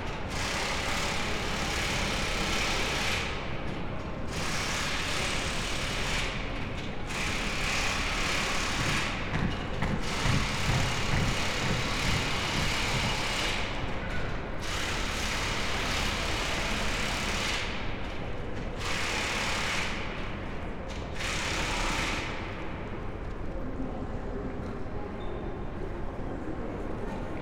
The Squaire, Frankfurt (Main) Flughafen - airport train station, hall ambience
place revisited
(Sony PCM D50, Primo EM172)